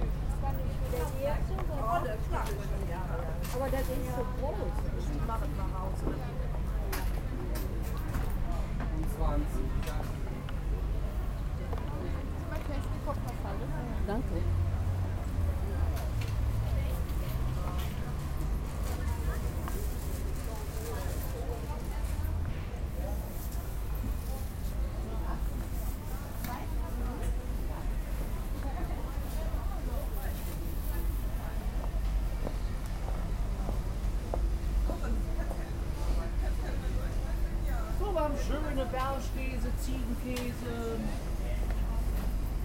weekly market - Köln, weekly market
friday market near "Apostelnkirche", may 30, 2008. - project: "hasenbrot - a private sound diary"